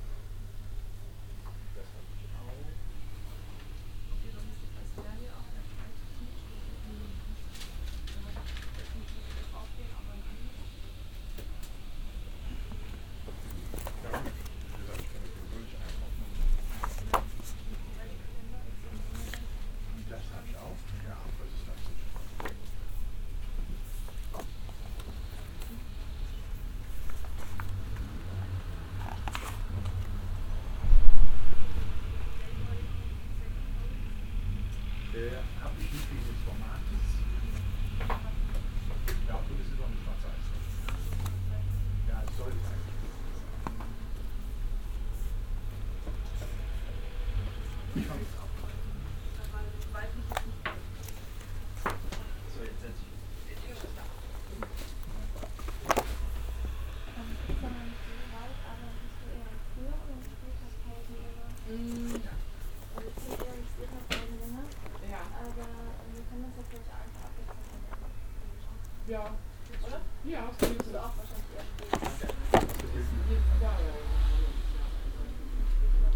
{
  "title": "cologne, apostelnstr, buchhandlung koenig - koeln, apostelnstr, buchhandlung könig 02",
  "description": "morgens in der buchhandlung, kunstbücher blättern im hintergrund kundengespräch und das verschieben einer bibliotheksleiter\nsoundmap nrw - social ambiences - sound in public spaces - in & outdoor nearfield recordings",
  "latitude": "50.94",
  "longitude": "6.94",
  "altitude": "58",
  "timezone": "GMT+1"
}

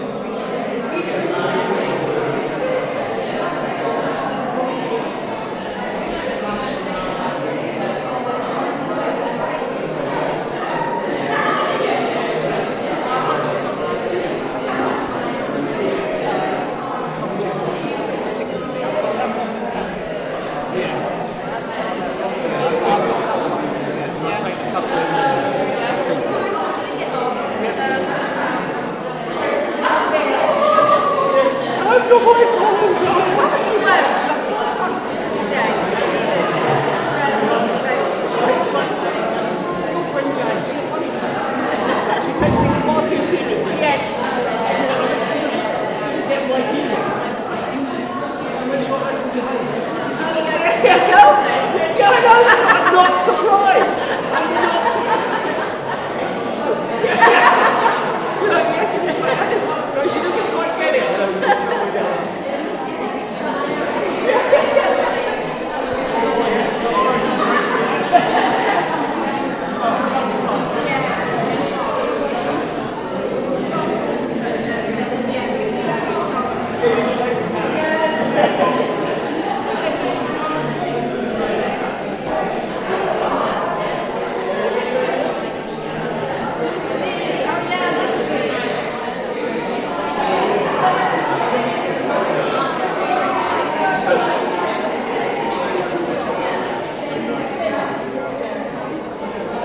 {
  "title": "Newport, Newport, Isle of Wight, UK - sound of art",
  "date": "2015-08-01 14:30:00",
  "description": "excerpt of gallery visitors' chat at exhibition preview",
  "latitude": "50.70",
  "longitude": "-1.29",
  "altitude": "6",
  "timezone": "Europe/London"
}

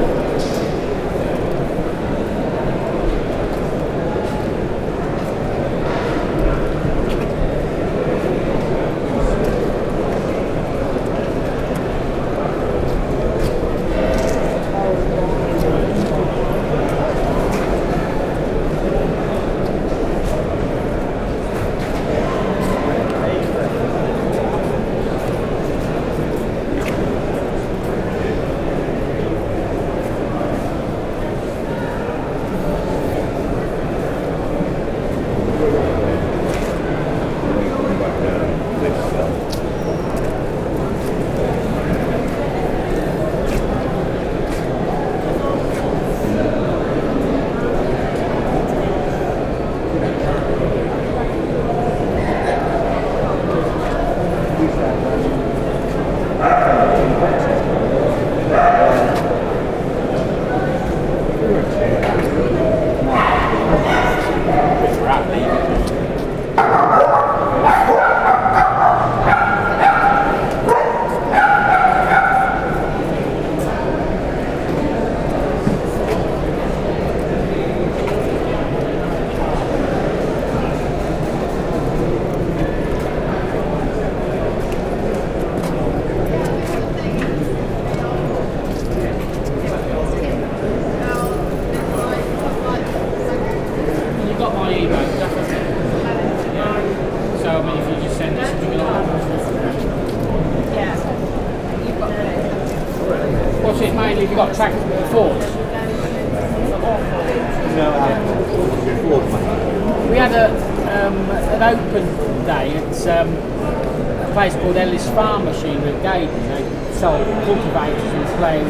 {
  "title": "Tractor Show at The 3 Counties Showground, Malvern, UK - Show",
  "date": "2019-08-03 11:35:00",
  "description": "This crowd is inside a series of large hangers for the exhibition of tractors and farm equipment.\nMixPre 6 II with 2 x Sennheiser MKH 8020s.",
  "latitude": "52.08",
  "longitude": "-2.32",
  "altitude": "64",
  "timezone": "Europe/London"
}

